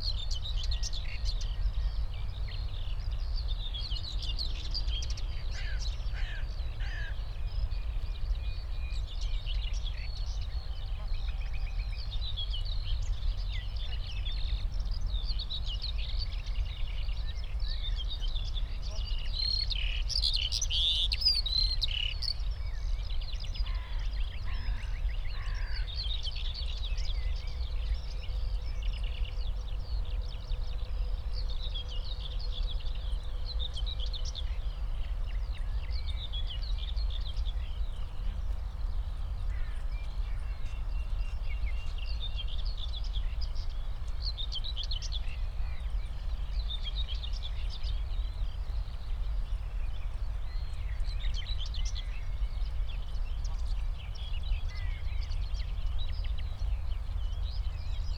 Tempelhofer Feld, Berlin, Deutschland - morning ambience /w Common whitethroat
spring morning ambience at former Tempelhof airport, a Common whitethroat (Dorngrasmücke, Curruca communis) calling nearby, a Nightingale in a distance, Skylarks and others too.
(Sony PCM D50, Primo EM272)
6 May 2022, ~08:00